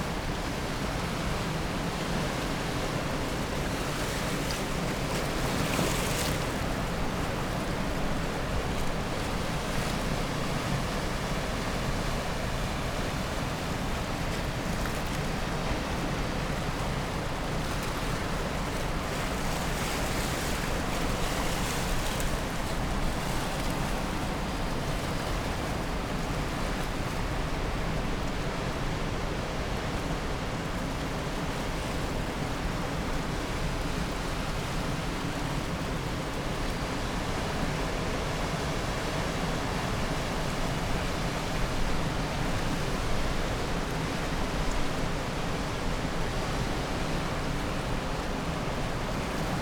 east pier ... outgoing tide ... lavalier mics clipped to T bar on fishing landing net pole ...